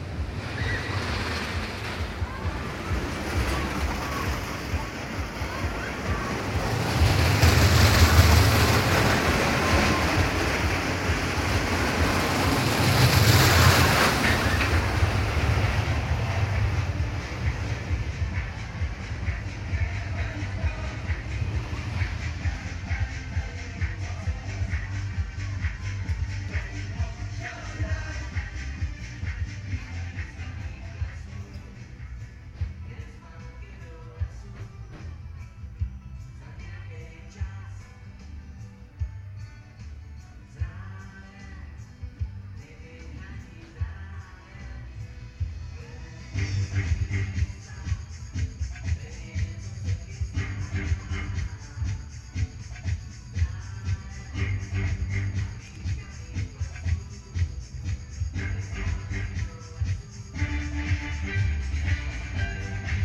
Lunapark Holesovice
Lunapark - in the former Park of Culture and Leisure in Holešovice exhibition area. Roller Caster during Sunday afternoon. Couple of fair attractions, around only few visitors. Vietnamese family resting on bench in front of the construction to observe the show.